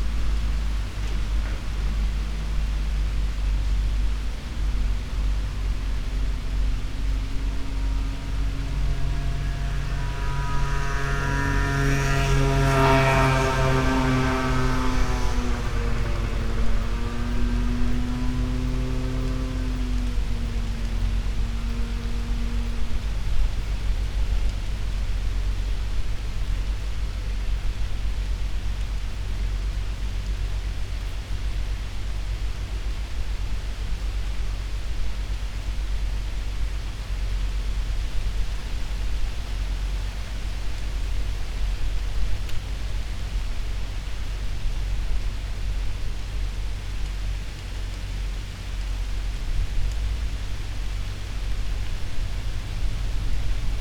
Ankaran, Slovenia - border area
winds through poplar tree, cars and motorbikes traffic, no stopping, no brakes, no driving off, just abandoned houses with already visible decay ... everything seemingly fluid nowadays
Ankaran - Ancarano, Slovenia